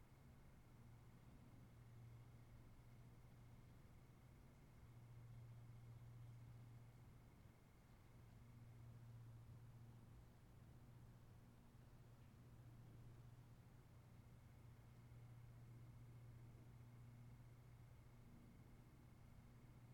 Field in Sandy - Outside Sandy/Elks Bugling
Empty field near dusk on a cool early fall day. Caught some elks running and bugling.